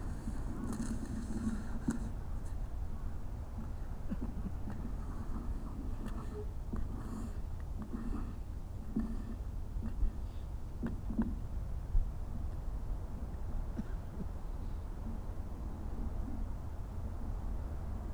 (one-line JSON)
{"date": "2021-01-10 13:00:00", "description": "Ice skater on frozen lake...a solitary guy was ice skating around and around in a circle...in fresh powdery dry snow on the frozen solid lake...my 1st perspective was to the side, 2nd perspective was inside his circle...", "latitude": "37.87", "longitude": "127.69", "altitude": "73", "timezone": "Asia/Seoul"}